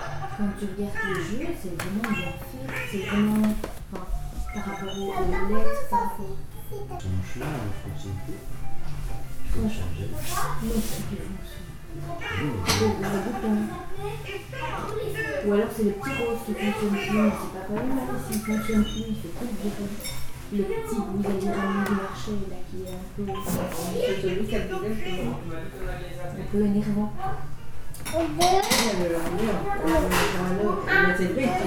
Court-St.-Étienne, Belgique - Family life
A classical family life in Belgium. In a peaceful way of life, people discuss and young children plays.